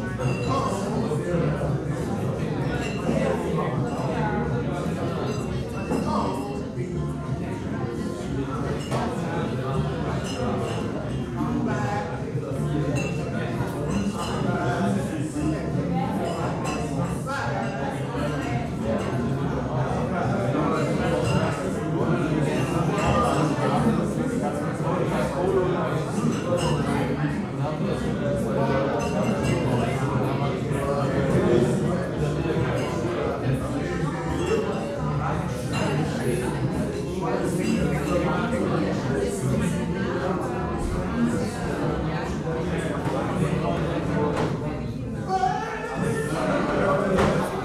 berlin, weydingerstraße: bar - the city, the country & me: bar people
the city, the country & me: august 6, 2011
Berlin, Germany, 6 August 2011